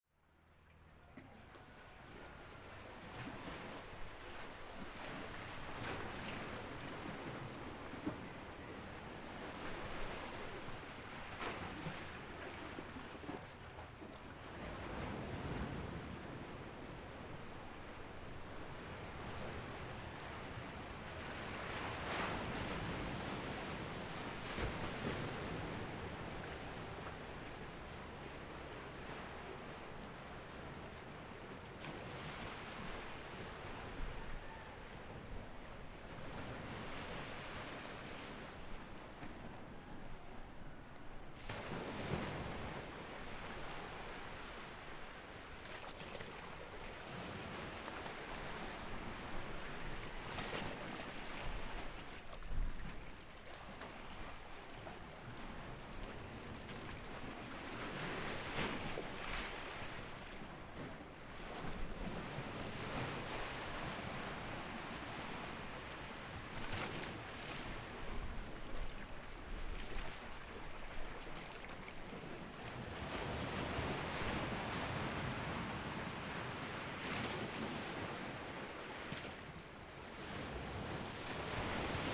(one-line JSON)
{"title": "龍蝦洞, Hsiao Liouciou Island - Chicken and waves", "date": "2014-11-01 15:52:00", "description": "Chicken sounds, On the coast, Sound of the waves\nZoom H6 XY", "latitude": "22.35", "longitude": "120.39", "altitude": "6", "timezone": "Asia/Taipei"}